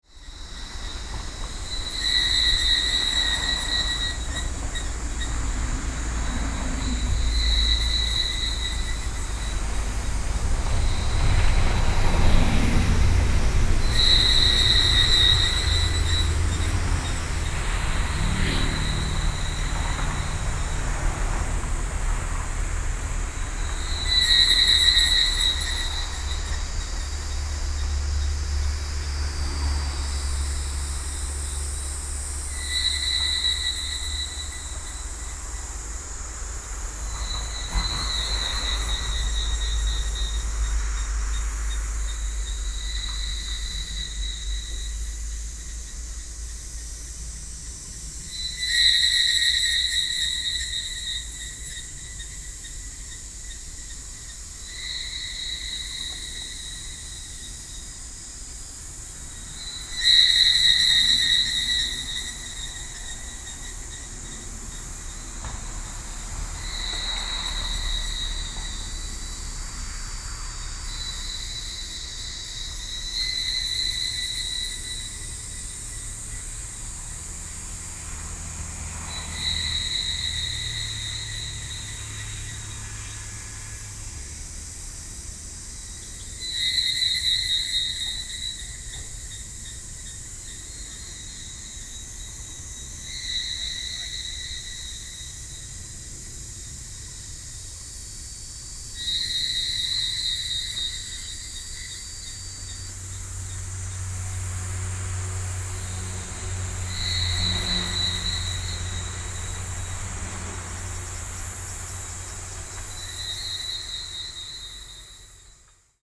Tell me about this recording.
Summer noon, Close to the road in the woods, Insects acoustic rhythm contrast roadside traffic noise, Binaural recordings